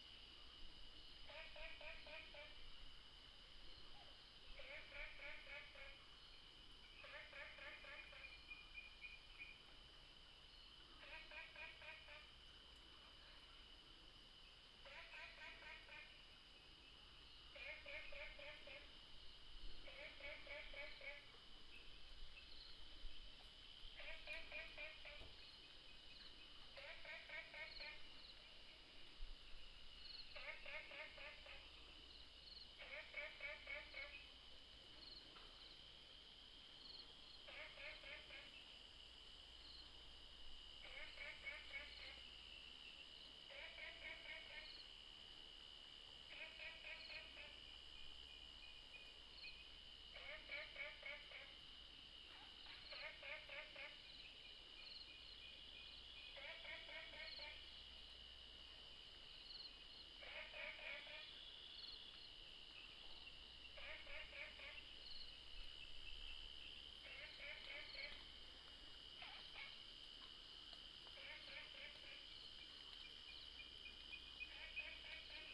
Frogs sound, walking around at the Hostel
Nantou County, Taiwan, 28 April